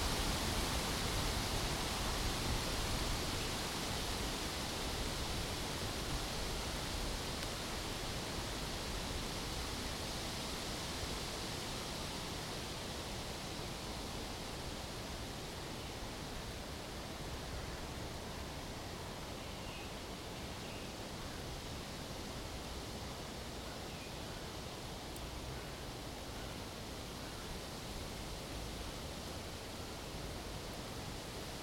Сергиев Посад, Московская обл., Россия - Wind noise in the trees
Wind noise in the trees. The wind gets stronger, weakens and then gets stronger again. Sometimes you can hear the creak of trees and birds and the noise of traffic in the distance.
Recorded with Zoom H2n, surround 2ch mode
Центральный федеральный округ, Россия, 30 May 2021